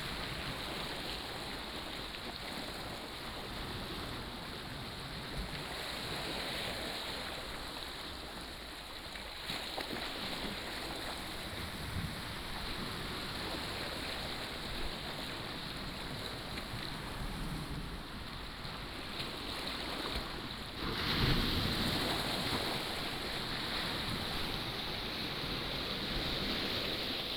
{
  "title": "仁愛村, Nangan Township - sound of the waves",
  "date": "2014-10-14 13:52:00",
  "description": "sound of the waves, On the beach",
  "latitude": "26.14",
  "longitude": "119.92",
  "altitude": "13",
  "timezone": "Asia/Taipei"
}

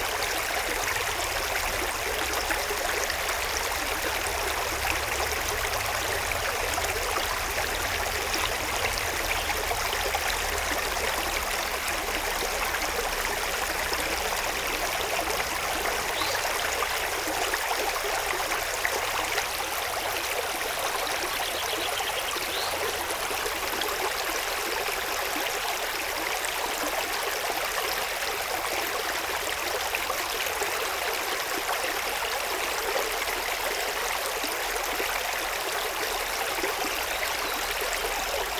{
  "title": "Genappe, Belgique - Ry d'Hez river",
  "date": "2017-04-09 15:10:00",
  "description": "The Ry d'Hez river, flowing in a very bucolic landcape.",
  "latitude": "50.59",
  "longitude": "4.49",
  "altitude": "115",
  "timezone": "Europe/Brussels"
}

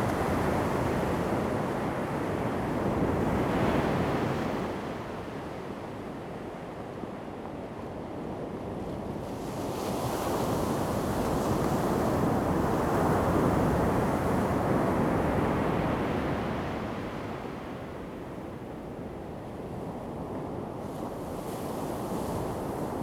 {
  "title": "Chenggong Township, Taitung County - Sound of the waves",
  "date": "2014-09-08 11:36:00",
  "description": "The weather is very hot, Sound of the waves\nZoom H2n MS +XY",
  "latitude": "23.20",
  "longitude": "121.40",
  "altitude": "2",
  "timezone": "Asia/Taipei"
}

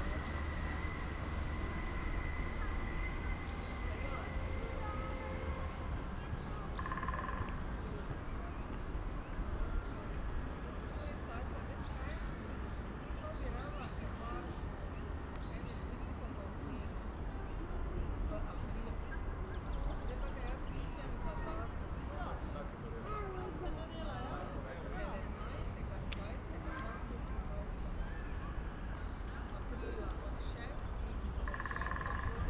{
  "title": "Maribor, Mestni park - afternoon ambience",
  "date": "2017-03-31 15:15:00",
  "description": "place revisited, warm spring afternoon, a bit of wind...\n(Sony PCM D50, Primo EM172)",
  "latitude": "46.56",
  "longitude": "15.65",
  "altitude": "284",
  "timezone": "Europe/Ljubljana"
}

